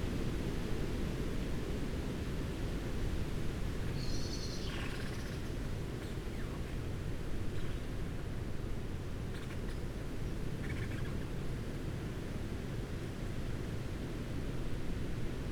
Luttons, UK - creaking tree branches ...
creaking tree branches ... add their calls to those of the birds that share the same space ... blue tit ... pheasant ... buzzard ... crow ... fieldfare ... blackbird ... treecreeper ... wren ... dpa 4060s in parabolic to MixPre3 ...